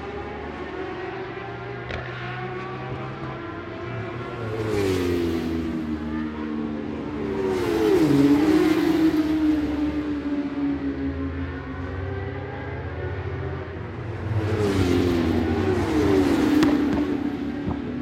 Scratchers Ln, West Kingsdown, Longfield, UK - BSB 2005 ... Superbikes ... FP2 ...
BSB ... Superbikes ... FP2 ... one point stereo mic to minidisk ...
March 2005